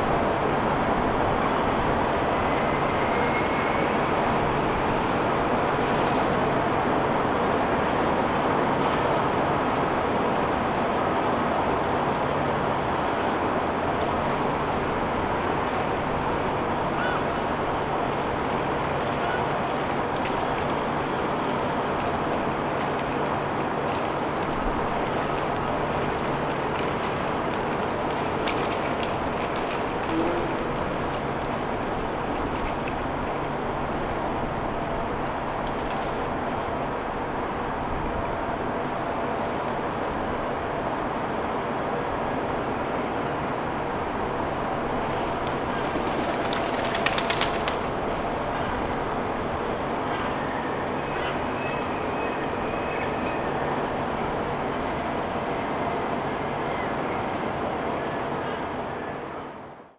Looking at the Spirit of Belfast, you will know you’re at a local stop for shopping. Most of the time there will be a performer or musician in this circle, grabbing the attention of shoppers, there will be your fast walkers, your slow walkers, those who zoom past you with their bicycle. On this day, nothing. Only a few instances of signs of civilization.
County Antrim, Northern Ireland, United Kingdom, 27 March 2020